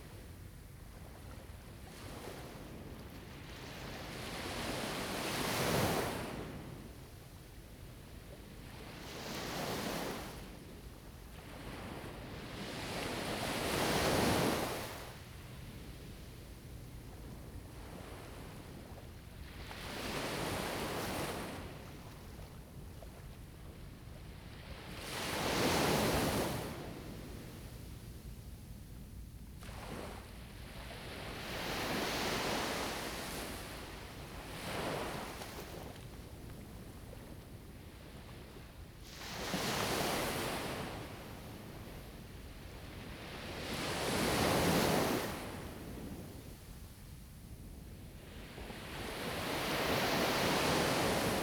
林投金沙灘, Huxi Township - Sound of the waves
In the beach, Sound of the waves
Zoom H2n MS +XY